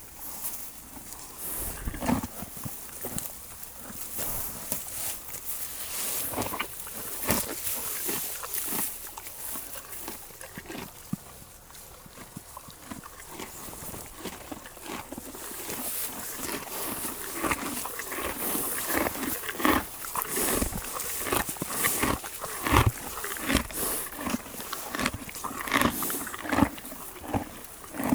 Vatteville-la-Rue, France - Horses
This night, we slept with the horses, on a very thick carpet of hay. On the morning, horses are near us. Your bed is very very very enviable !! So we gave the hay to the happy horses, they made a very big breakfast ! At 7 on the morning, the bell of Vatteville-La-Rue rings.